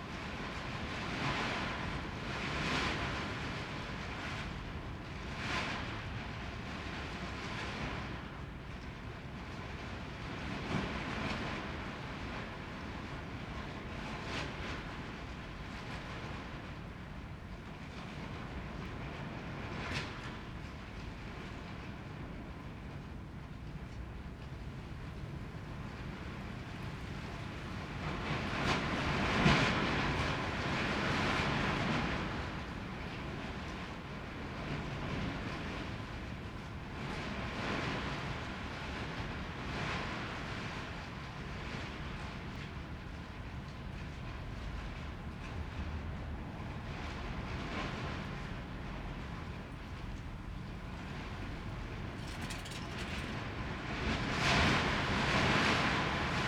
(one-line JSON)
{"title": "Berlin Bürknerstr., backyard window - night, wind in tarp", "date": "2015-02-16 01:05:00", "description": "night in the backyard, wind, sound of a rattling tarp\n(Sony PCM D50, Primo EM172)", "latitude": "52.49", "longitude": "13.42", "altitude": "45", "timezone": "Europe/Berlin"}